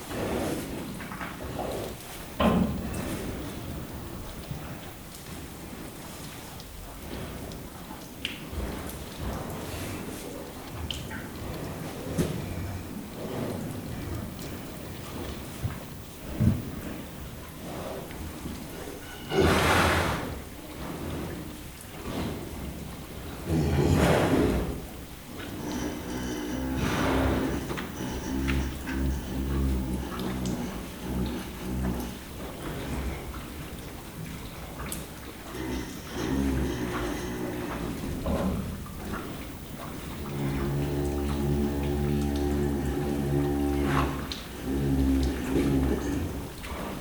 Schlafende Kühe im Stall; Night on a farm.
Windhaag bei Freistadt, Austria, 18 July 2004, ~00:00